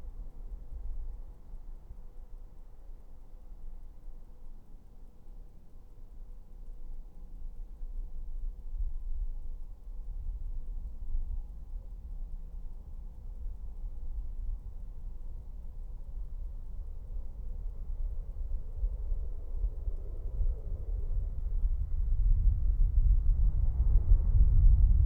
Belvederis, Lithuania, in the rain pipe
recorder placed in the long rainpipe at the wall of abandoned Belvederis mansion